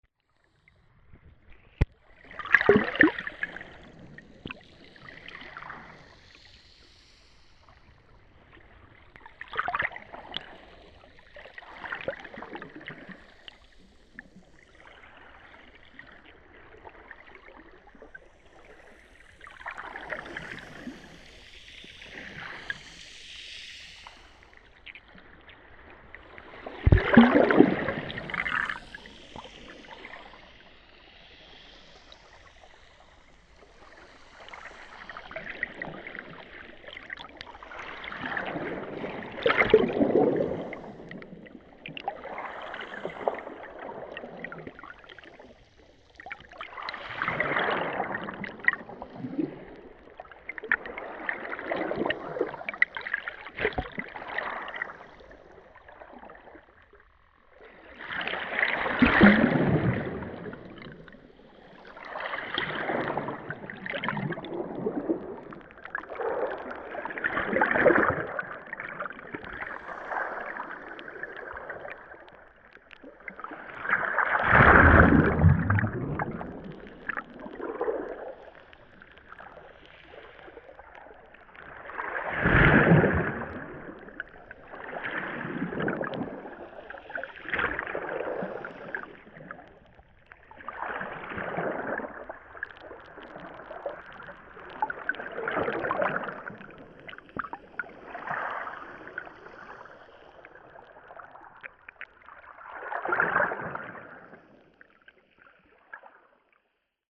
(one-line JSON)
{"title": "Port Racine France - Port Racine", "date": "2017-01-09 16:38:00", "description": "Under water at Port Racine with Aquarian H2a Hydrophone, Zoom H6", "latitude": "49.71", "longitude": "-1.90", "altitude": "3", "timezone": "Europe/Berlin"}